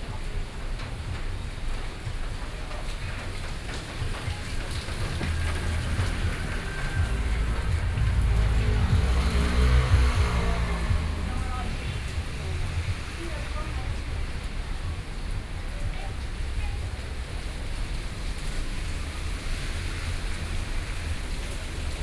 Taiyuan Rd., Datong Dist. - Heavy rain
Heavy rain
Sony PCM D50+ Soundman OKM II
Datong District, Taipei City, Taiwan, June 2014